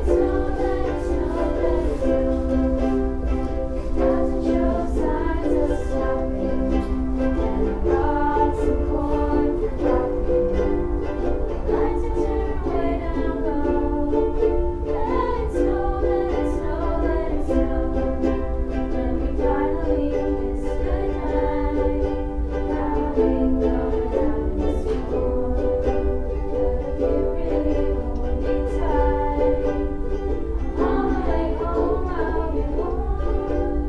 Muhlenberg College, West Chew Street, Allentown, PA, USA - Outside the Red Door
Students practice a holiday melody on ukuleles outside the Red Door in the Muhlenberg student Union building.
2 December